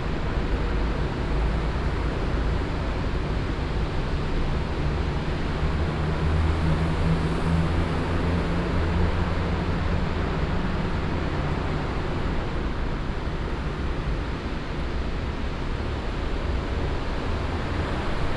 essen, rathaus galerie, traffic
Traffic recorded under the gallery bridge construction in between the two lanes.
Projekt - Klangpromenade Essen - topographic field recordings and social ambiences